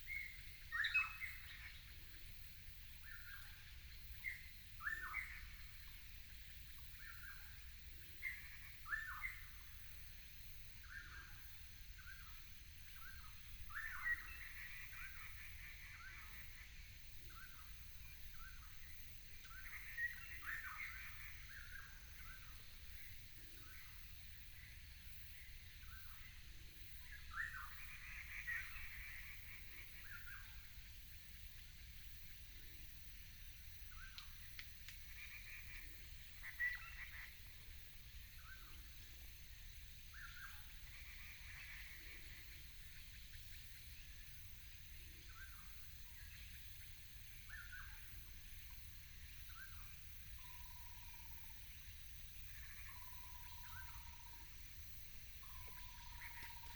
竹25鄉道, Guanxi Township, Hsinchu County - A variety of birds call
A variety of birds call, Insects sound, Evening in the mountains, Binaural recordings, Sony PCM D100+ Soundman OKM II